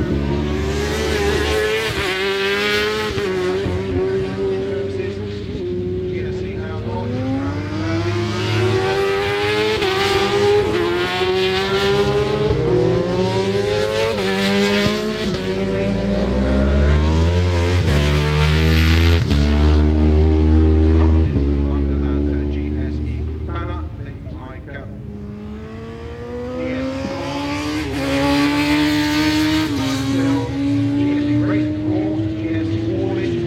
Silverstone Circuit, Towcester, United Kingdom - British Superbikes 2000 ... practice
British Superbikes 2000 ... pratice ... one point stereo mic to minidisk ...
2 July 2000